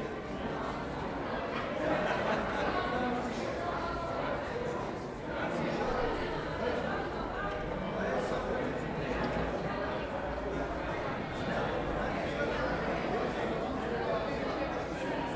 Kortenbos, Centrum, Nederland - Newlyweds drive off.
Wedding in the Theresia van Ávila church - Friends and family of the newlyweds are waiting outside. The couple comes out of the church and get into a decorated Beetle and drive off.
Zoom H2 recorder with SP-TFB-2 binaural microphones.
The Hague Center, The Netherlands, 8 June, 14:00